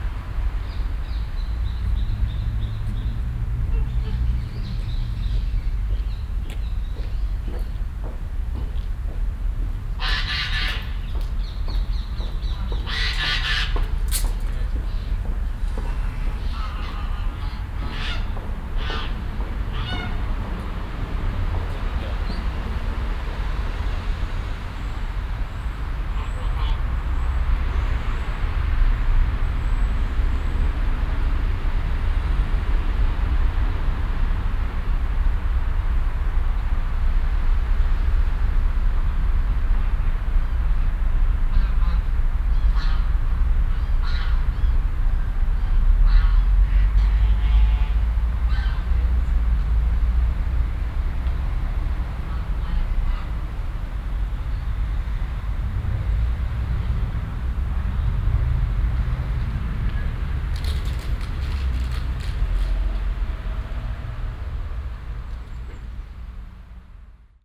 Düsseldorf, Hofgarten, Jan Wellem Passage
In der Passage, das Hereinrollen eines Radkuriers mit Walkie Talkie, Verkehr und Schritte
soundmap nrw: social ambiences/ listen to the people - in & outdoor nearfield recordings